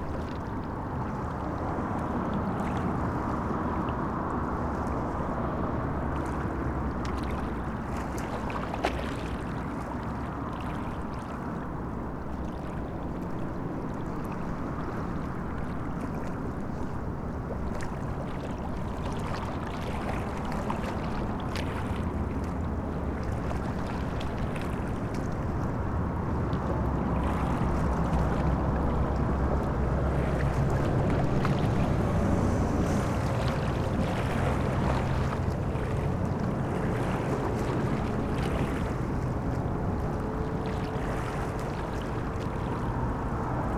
Asker, Norway, at the highway
Nesbru, Norway